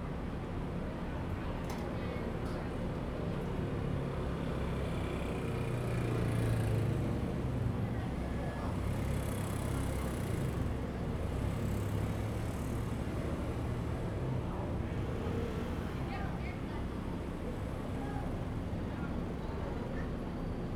Outside the station, Traffic Sound, Tourists
Zoom H2n MS+XY